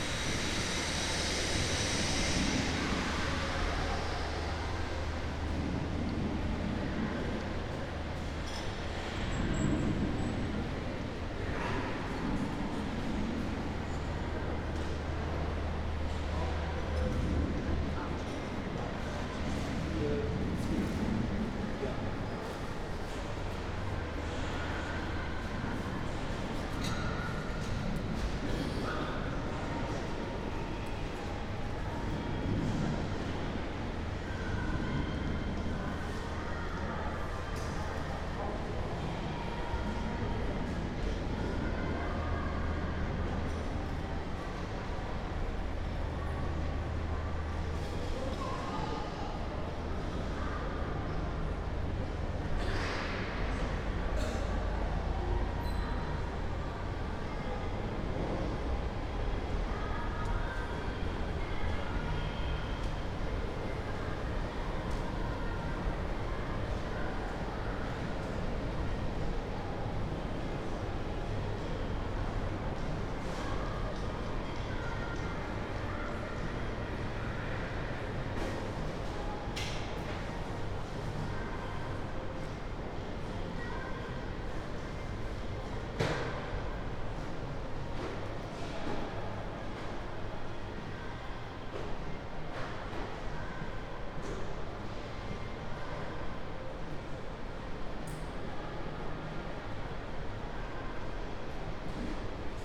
Avenue du Rock’n’Roll, Belval, Esch-sur-Alzette, Luxemburg - shopping center walk
Esch-sur-Alzette, Belval, walking in a shopping center, it has just opened, only a few people are around
(Sony PCM D50, Primo EM172)
Canton Esch-sur-Alzette, Lëtzebuerg